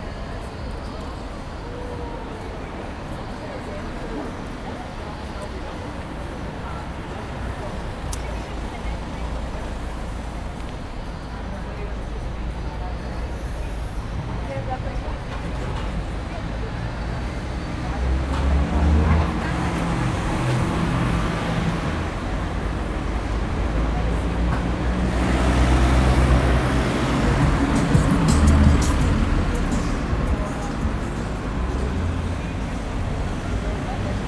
Eda center, Nova Gorica, Slovenia - Traffic in the city
The is a new bar in town and is not that great. Waiting for the piece of pizza an listening to the sounds of the street.
June 2017